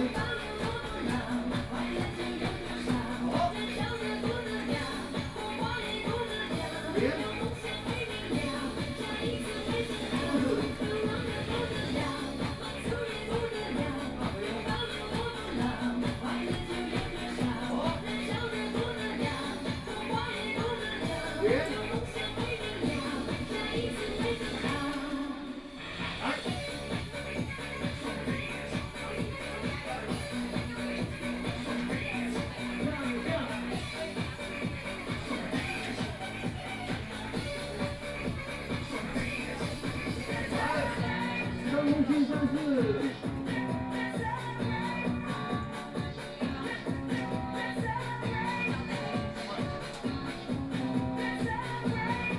In the nursing home, Binaural recordings, Zoom H6+ Soundman OKM II
24 December 2013, ~10:00